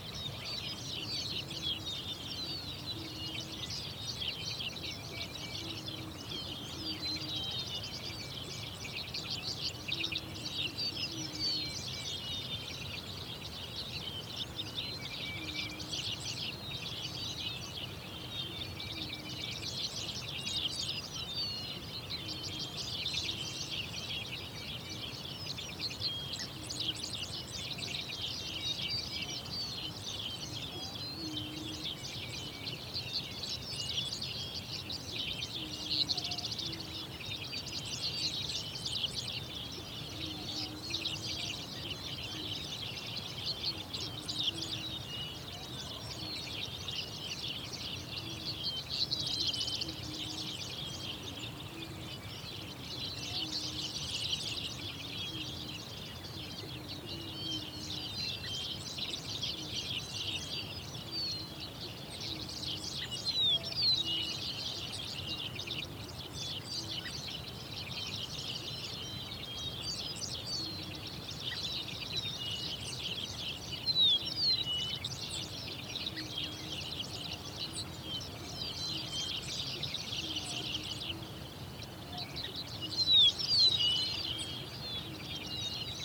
Tall Grass Prairie - Birds singing in the countryside, Oklahoma, USA
Early morning birds singing in the tall-grass prairie reserve in Oklahoma, USA. Sound recorded by a MS setup Schoeps CCM41+CCM8 Sound Devices 788T recorder with CL8 MS is encoded in STEREO Left-Right recorded in may 2013 in Oklahoma (close to Pawhuska), USA.
11 May 2013